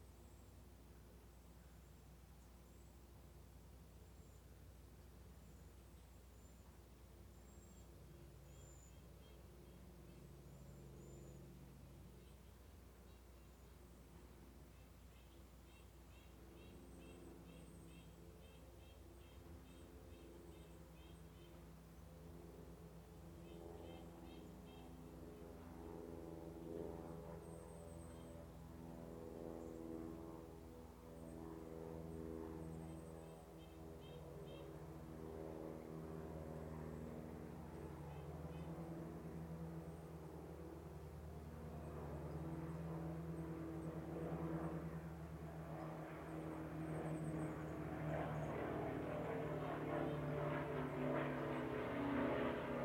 My house is about 2½ miles from Paine Field, where Paul Allen's Flying Heritage & Combat Armor Museum is headquartered. On weekends during the summer, we are frequently treated(?) to flyovers of some of his vintage WWII fighter planes; sometimes 3 or 4 of them together in formation. They fly circles over us, until they run out of gas and return to the field. They are loud.
Major elements:
* World War Two-era prop airplanes (I missed the little red jet earlier)
* Oystershell windchimes
* Distant leaf blowers
* Birds
* Delivery vans